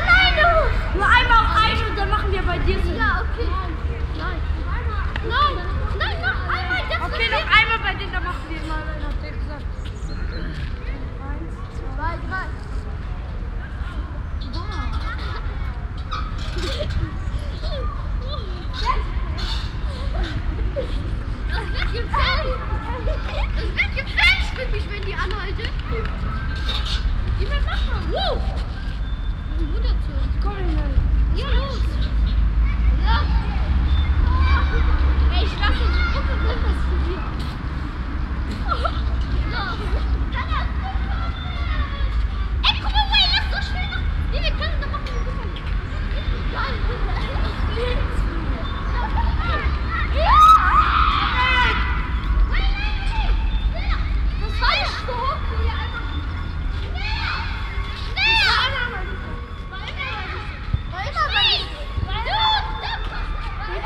monheim, weddinger strasse, city spielplatz
nachmittags kinderstimmen am spielplatz
soundmap nrw:
social ambiences, topographic fieldrecordings